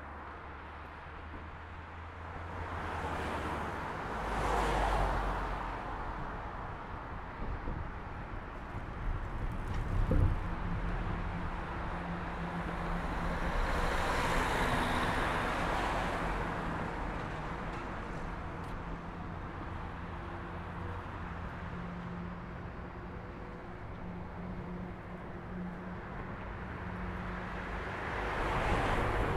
Temse, Belgium - Op Adem
A soundwalk by Jelle Van Nuffel from downtown Temse to Wildfordkaai Temse (Belgium)